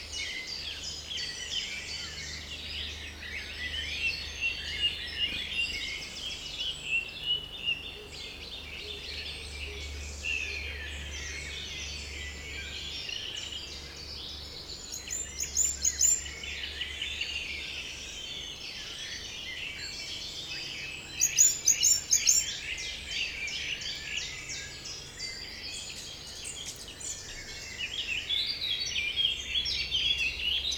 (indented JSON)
{
  "title": "Binche, Belgium - On the spoil pile",
  "date": "2018-05-10 11:45:00",
  "description": "On the huge spoil pile, Eurasian Blackcap concerto. Also people working in their home with a small bulldozer, massive planes coming from Charleroi airport and police driving on the road. If listening with an helmet, a special visitor on 19:21 mn ;-)",
  "latitude": "50.42",
  "longitude": "4.20",
  "altitude": "112",
  "timezone": "Europe/Brussels"
}